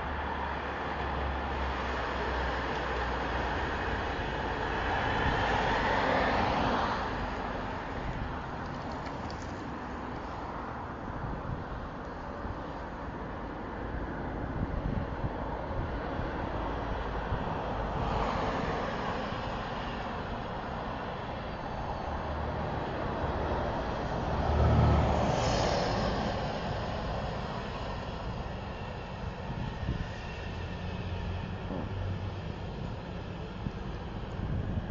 Åboulevards traffic late at night
Thats just a quiet night on the bicycle bridge surpassing Åboulevard.